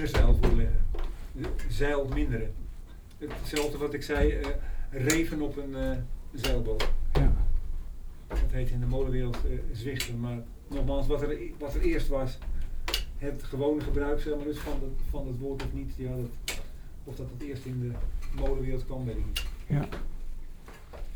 naar boven onder de molenkap zonder te malen - over molentermen als zwichten
molentermen in de nederlandse taal
9 July 2011, ~15:00